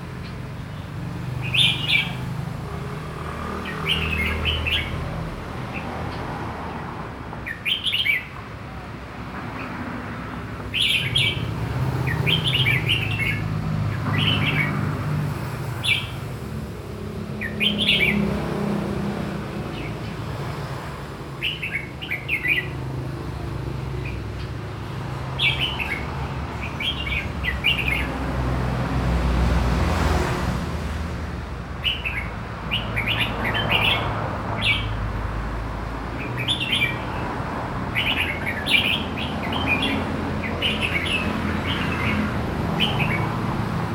Koh Samui, birds in a courtyard
Koh Samui, oiseaux en cage dans une cour intérieure.